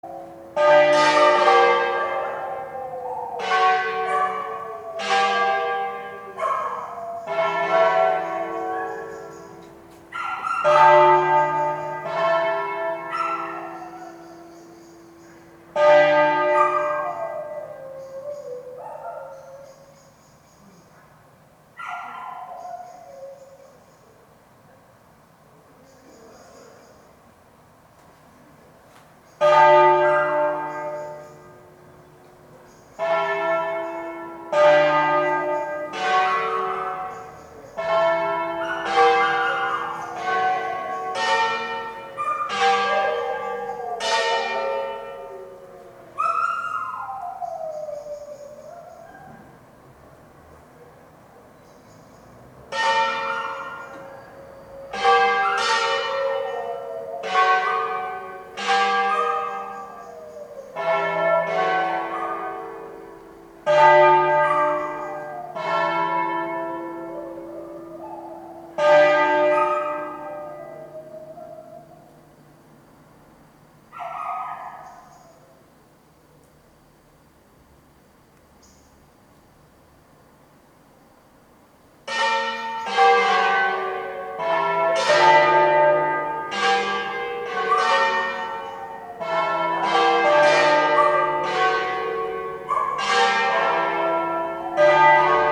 Via Bossi, Pavia, Italy - Another concert for bells and dog
Sunday concert from the same church and the same dog
4 November 2012